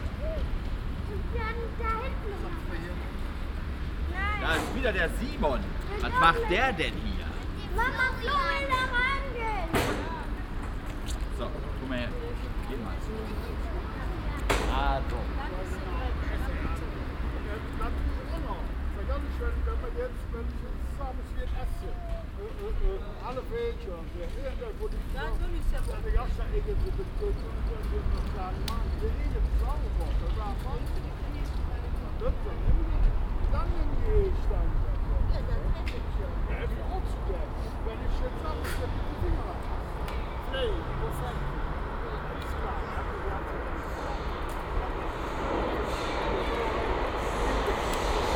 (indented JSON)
{
  "title": "cologne, neusserstrasse, agneskirche, platz - koeln, neusserstrasse, agneskirche, platz",
  "description": "parkbankgespräche, kinder hüpfen auf abdeckung zu u-bahnschacht\nproject: social ambiences/ listen to the people - in & outdoor nearfield recordings",
  "latitude": "50.95",
  "longitude": "6.96",
  "altitude": "55",
  "timezone": "GMT+1"
}